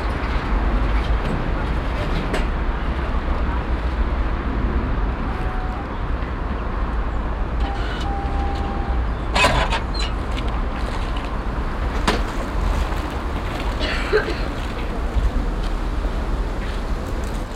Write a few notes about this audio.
ein- und abfahrt eines sbahn zuges, nachmittags, soundmap nrw: topographic field recordings, social ambiences